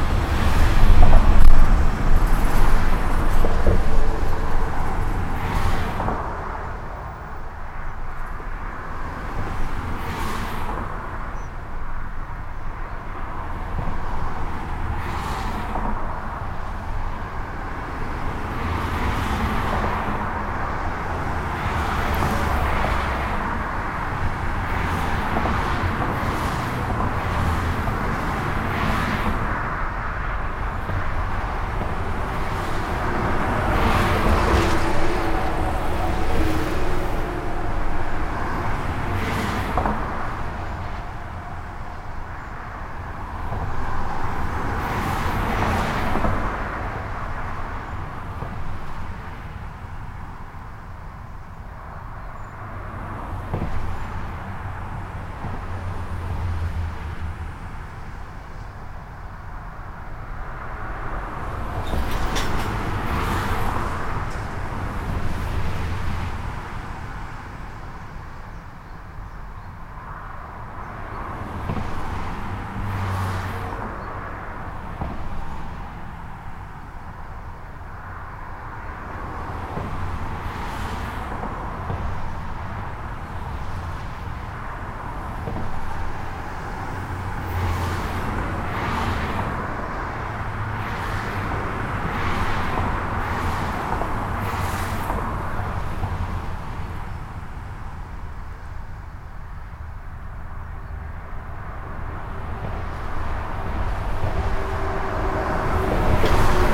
A dense trafic on the local highway, called N25.